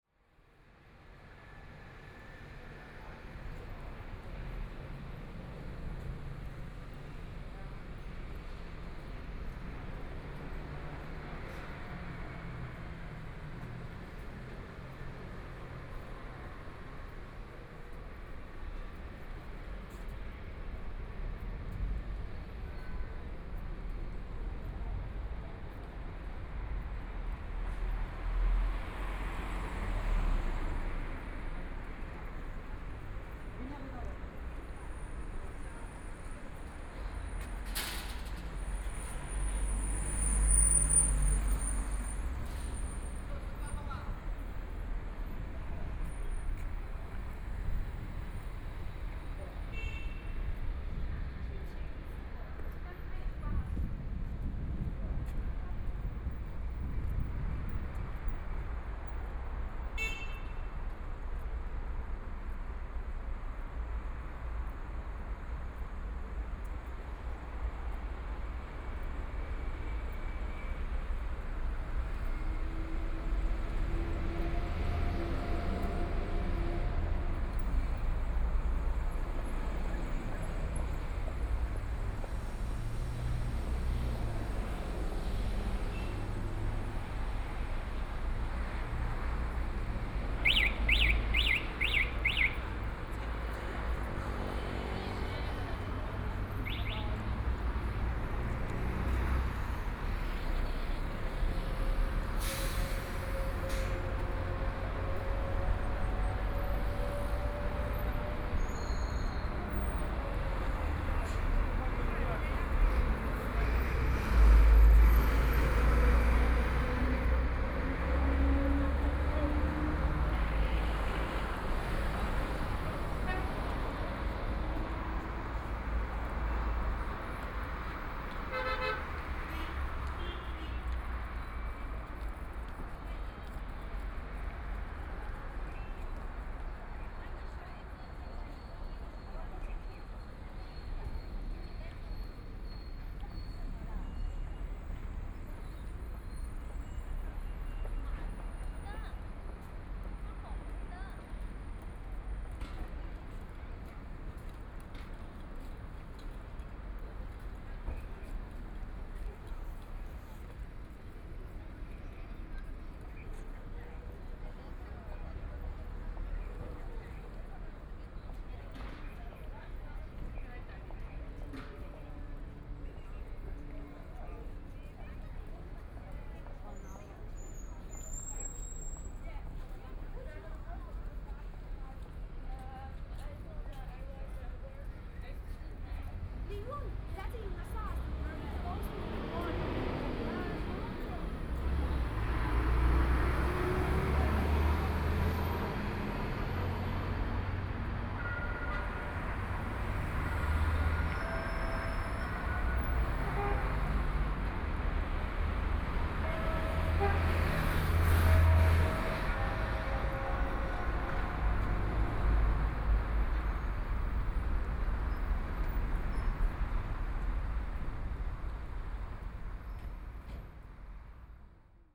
Bells, In the corner, Traffic Sound, Binaural recording, Zoom H6+ Soundman OKM II
25 November 2013, 15:30